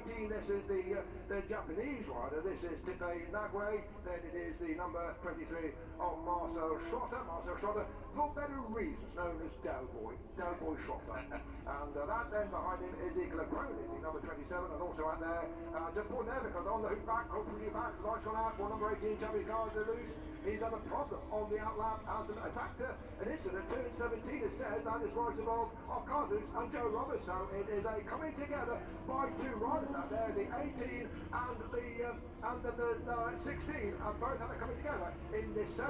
british motor cycle grand prix 2019 ... moto two qualifying one ... and commentary ... copse corner ... lavalier mics clipped to sandwich box ...